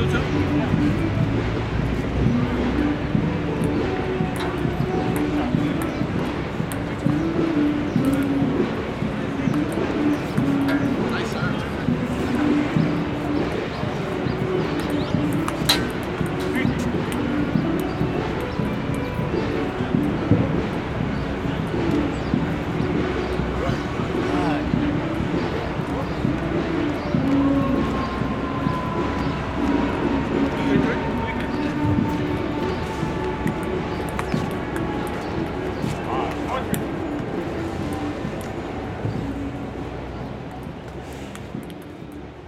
W 42nd St, New York, NY, USA - Ping Pong at Bryant Park
A ping pong match at Bryant Park.
United States, 1 April 2022, 18:30